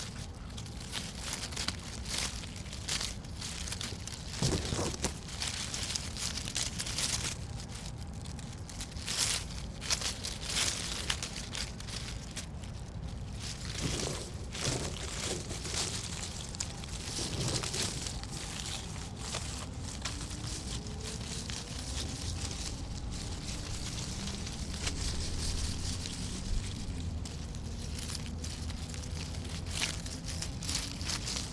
Washington Park, South Doctor Martin Luther King Junior Drive, Chicago, IL, USA - Summer Walk 1
Recorded with Zoom H2. Interactive walk through Washington Pk. Exploring the textures and rhythm of twigs bark and leaves.